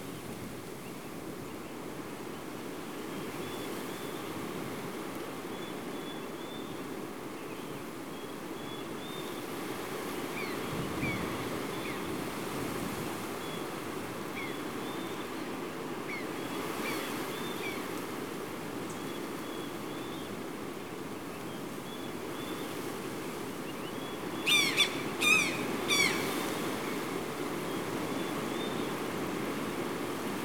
Peten, Guatemala - La Danta nature soundscape